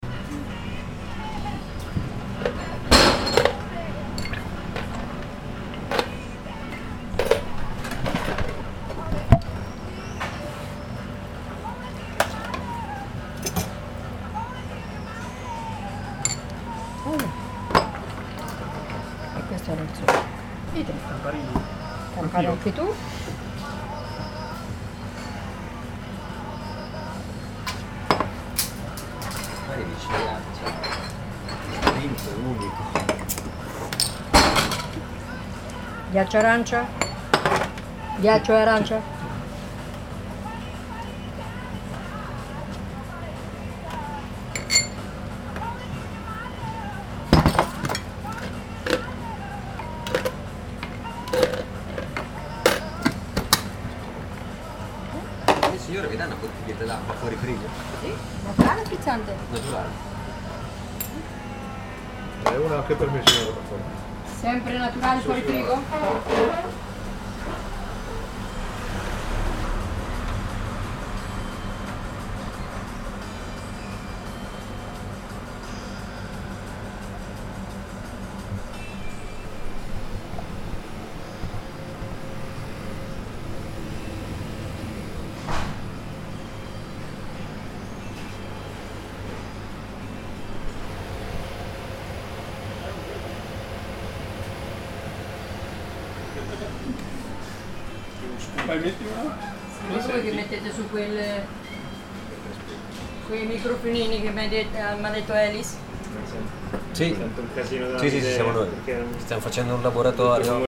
Corso Roma, Serra De Conti AN, Italia - campari at caffé italia
ambience of the wooden walls small bar, people ordering some campari drinks, radio and refrigeration fans on the background.
(xy: Sony PCM-D100)
Serra De Conti AN, Italy